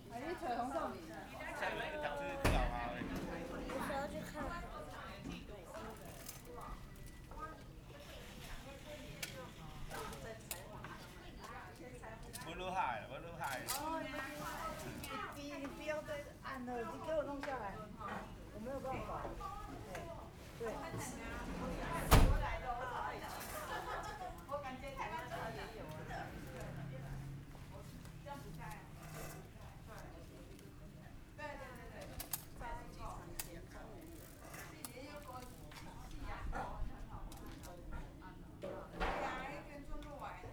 Guangming Rd., Fangyuan Township - Small village
On the streets of hamlet, Dialogue between people who live in a small village, Traffic Sound, Zoom H6